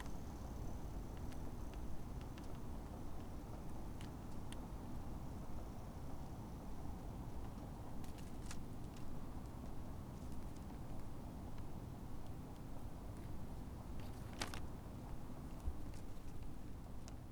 bills on a fence fluttering in the wind, young couple passes by
the city, the country & me: february 2, 2012
Berlin, Germany, 2 February